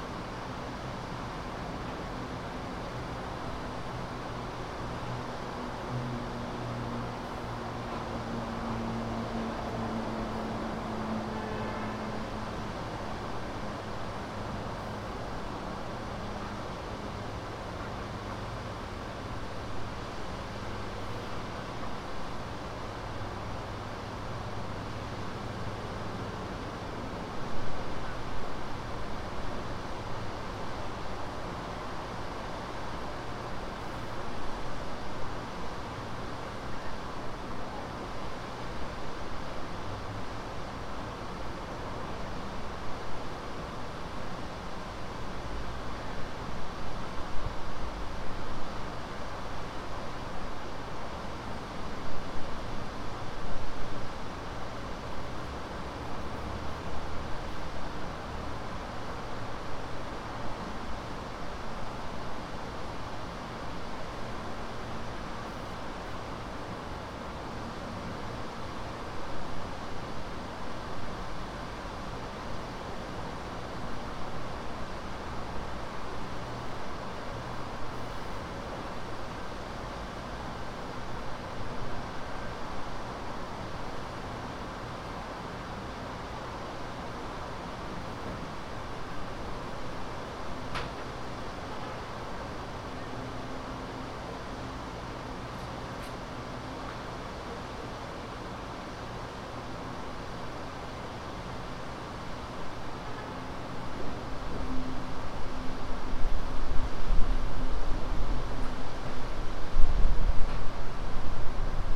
St NE, Atlanta, GA, USA - Saturday afternoon in the city
The sound of Atlanta on a Saturday afternoon, as heard from a patio of a condo. The traffic wasn't particularly heavy, but cars are still heard prominently. At certain points, muffled sounds from the condo behind the recorder bleed into the microphones. It was gusty, so subtle wind sounds can also be heard. Minor processing was applied in post.
[Tascam DR-100mkiii, on-board uni mics & windmuff]